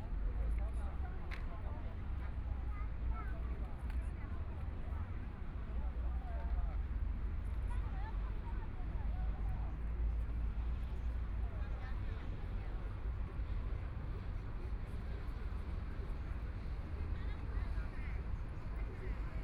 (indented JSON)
{
  "title": "the Bund, Shanghai - Tourist area",
  "date": "2013-12-02 11:27:00",
  "description": "Many tourists, The sound of the river boat, Binaural recordings, Zoom H6+ Soundman OKM II",
  "latitude": "31.24",
  "longitude": "121.49",
  "altitude": "15",
  "timezone": "Asia/Shanghai"
}